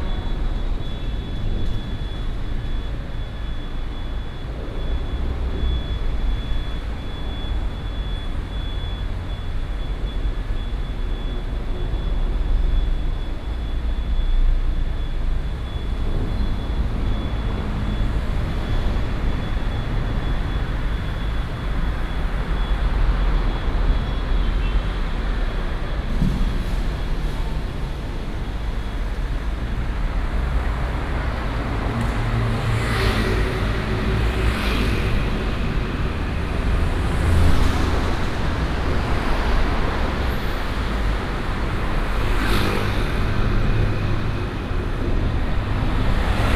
Sec., Xitun Rd., 台中市西屯區西墩里 - Under the highway
Traffic sound, Under the highway
Taichung City, Taiwan, March 2017